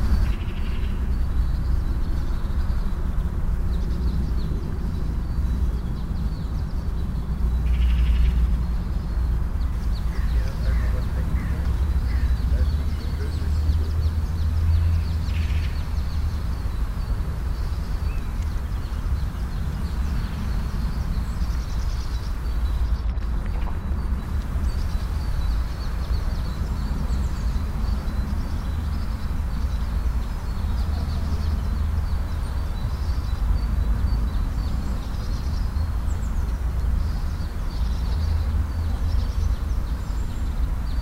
hochdahl, neanderkirche, friedhof
project: :resonanzen - neanderland soundmap nrw: social ambiences/ listen to the people - in & outdoor nearfield recordings